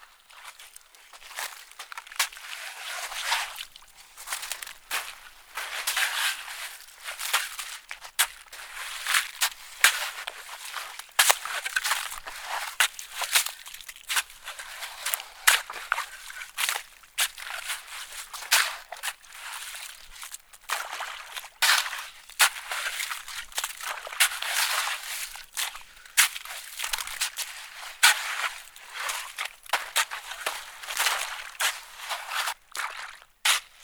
Keeler, CA, USA - Shoveling in Owens Lake bacterial pond
Metabolic Studio Sonic Division Archives:
Shoveling in bacterial pond on Owens Lake. Recorded with Zoom H4N recorder
California, United States